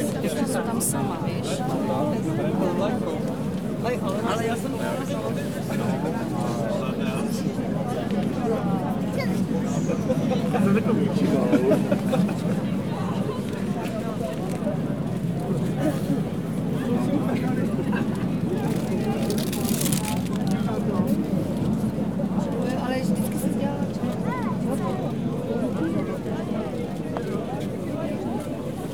Recorded on Zoom H4n, 28.10. 2015.